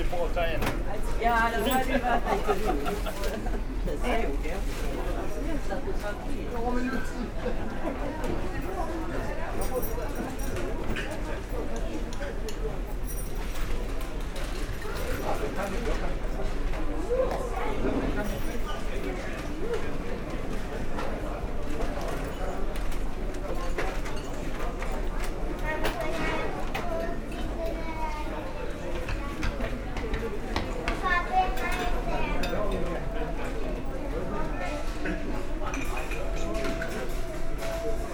Rødby, Denmark - Fehmarn Belt ferry
On the Femern Bælt, a strait separating Germany (town Puttgarden) to Denmark (town Rødby). The link is made by a ferry. Walking into the boat, some various sounds of the rooms. All the bottles placed in the shops vibrate !
18 April 2019